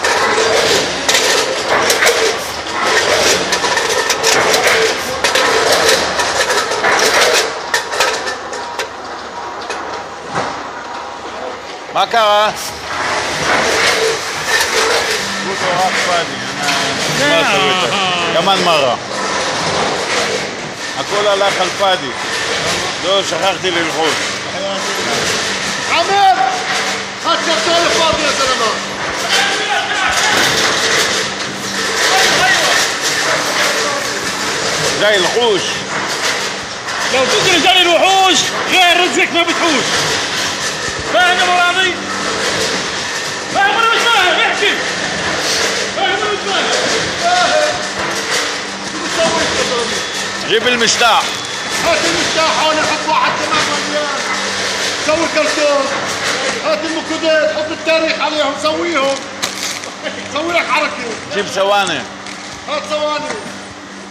Hatotzeret 158 Jerusalem, Abady factory - Night shift in cookies factory - Abady
This is the sound of machines for cookies packaging and people working in the factory of Abady, a known cookies company in Israel.